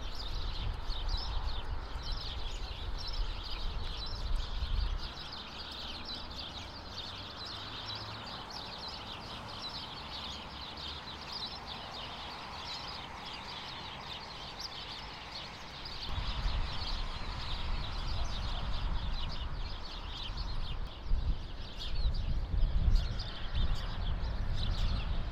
Marysin, Łódź, Polska - chmara wróbli/ a lot of sparrows
a lot of sparrows in winter
Łódź, Poland, 26 January 2015, 12:01